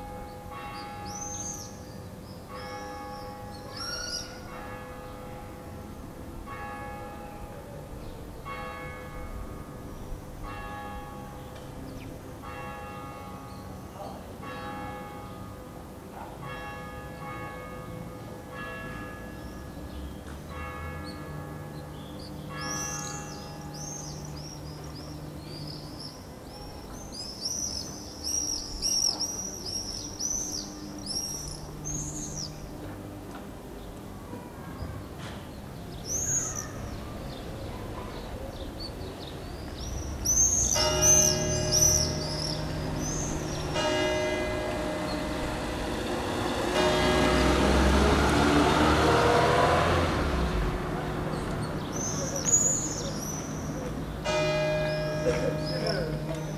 Nevers, rue des Ardilliers, the bells after the Mass.
Minidisc recording from 1999.
Nevers, France, 4 July